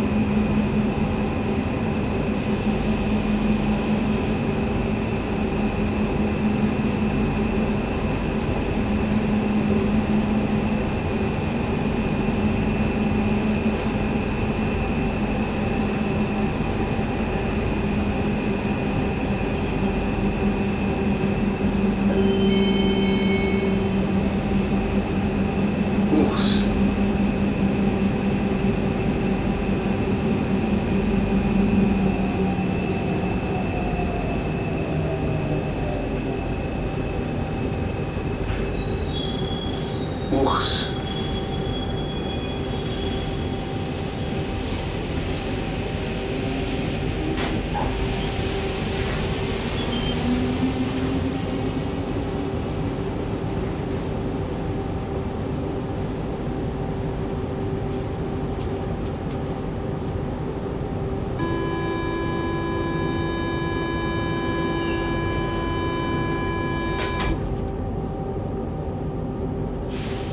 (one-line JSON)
{"title": "CHUV, Lausanne, Suisse - MetroM2 inside from CHUV to Flon", "date": "2017-08-08 20:43:00", "description": "MetroM2_inside_from_CHUV_to_Flon\nSCHOEPS MSTC 64 U, Sonosax préamp, Edirol R09\nby Jean-Philippe Zwahlen", "latitude": "46.53", "longitude": "6.64", "altitude": "579", "timezone": "Europe/Zurich"}